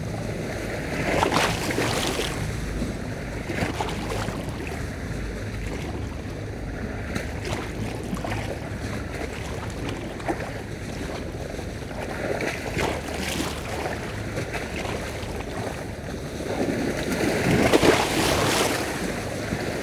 Porte-Joie, France - Eurasian wren
An eurasian wren is singing and a boat is passing by on the Seine river.
22 September 2016, 16:30